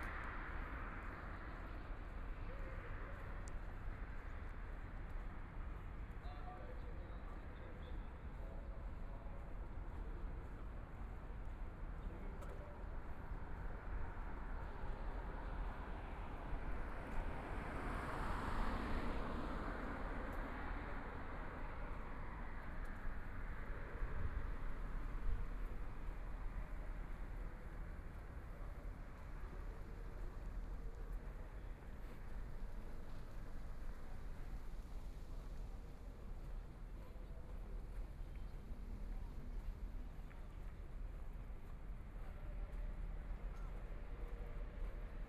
Huangpu District, Shanghai - Walking on the road
Walking on the road, Traffic Sound, Binaural recording, Zoom H6+ Soundman OKM II
Huangpu, Shanghai, China, 26 November 2013, 15:40